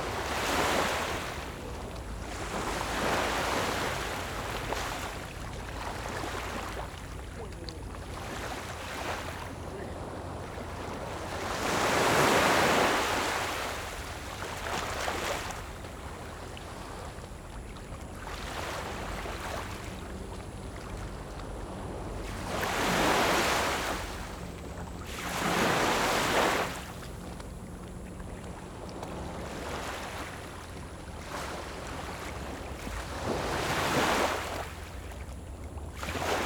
Yu’ao, Wanli Dist., New Taipei City - Sound of the waves
Small beach, Sound of the waves, Rode NT4+Zoom H4n
New Taipei City, Taiwan, 25 June 2012